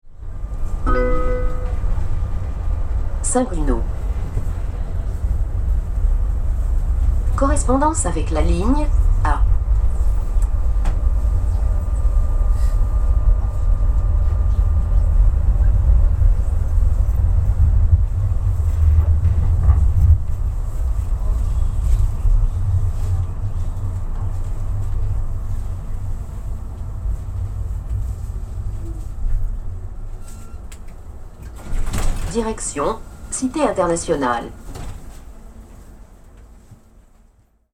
Agn s at work St Bruno RadioFreeRobots